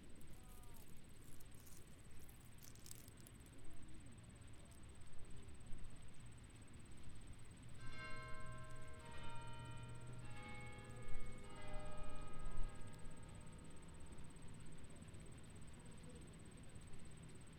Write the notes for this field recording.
Recording focused on bugs in a bush between the plaza and street. Audible sounds of people and cars passing, and nearby fountain.